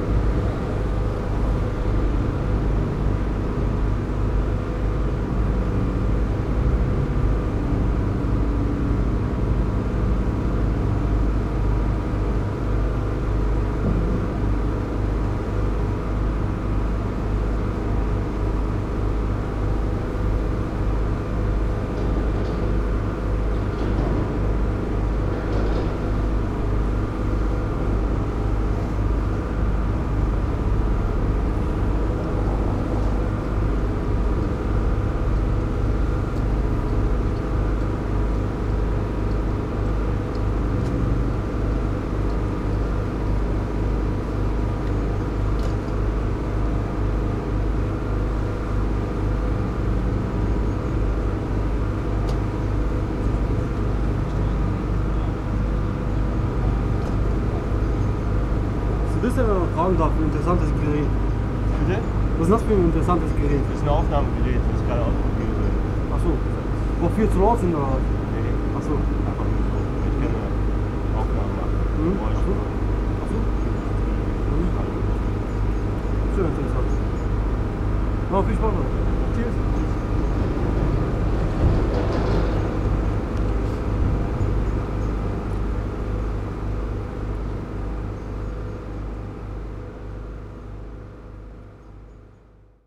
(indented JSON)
{
  "title": "berlin: friedelstraße - the city, the country & me: sewer works",
  "date": "2014-01-27 10:18:00",
  "description": "drone of sewer works site\nthe city, the country & me january 27, 2014",
  "latitude": "52.49",
  "longitude": "13.43",
  "altitude": "46",
  "timezone": "Europe/Berlin"
}